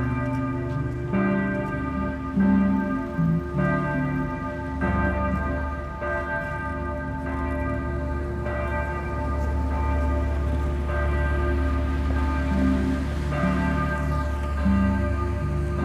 Late afternoon busker plays in time, sort of, with the cathedral bell.